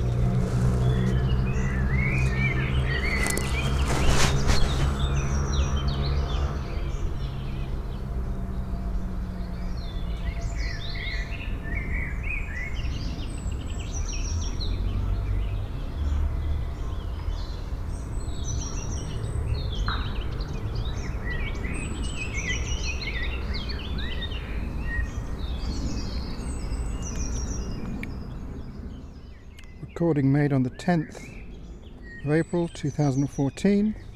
London Borough of Haringey, Greater London, UK - Woodland Walk by Wood Vale Shepherd's Hill Allotments